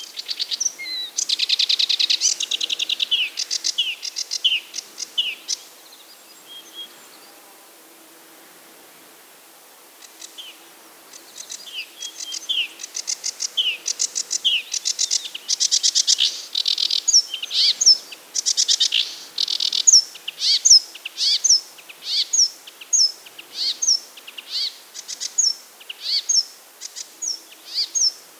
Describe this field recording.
Birds in the tundra. Птицы в тундре